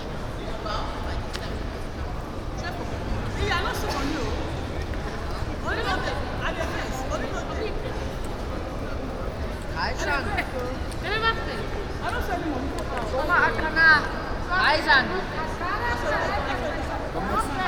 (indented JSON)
{
  "title": "essen, porscheplatz, rathaus galerie",
  "date": "2011-05-04 09:20:00",
  "description": "In der Einkaufspassage. Klänge von Menschen die auf dem Steinboden gehen. Vorbeigehen an verschiedenen Ladenlokalen. Der Hallraum der hochreflektiven Stein und Glass Architektur.\nInside the shopping gallery. people walking on the stone floor, passing by several open stores. the reverb of the high reflecting glass and steel roof.\nProjekt - Stadtklang//: Hörorte - topographic field recordings and social ambiences",
  "latitude": "51.46",
  "longitude": "7.01",
  "altitude": "77",
  "timezone": "Europe/Berlin"
}